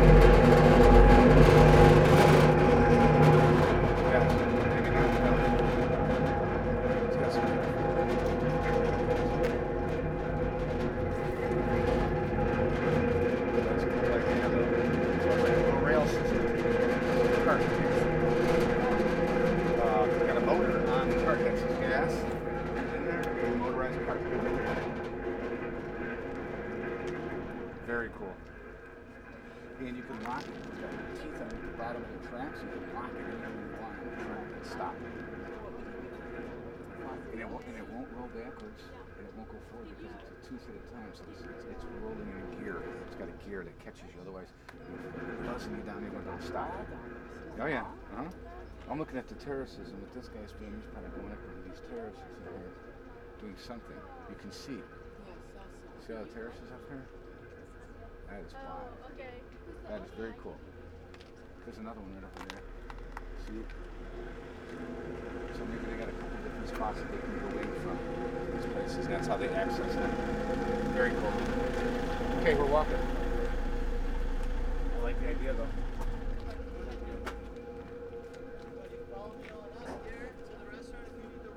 Corniglia, in front of restaurant Cecio - transporting contaprtion
recording of a simple transporting vehicle moving on a single rail. basically a motor that tows two carts. such contraptions are used commonly in this area by vineyard workers and construction workers to transport tools, materials and grapes up and down the hill. you can see it when you switch to street view. around 1:00-2:15 I recorded the resonating cover of the transporter. although the machine was already quite away the metal box was ringing, induced by the throbbing rail. later an excited american guy talking about the device, giving a thorough explanation how this machine works to his wife.
Corniglia, La Spezia, Italy, 4 September 2014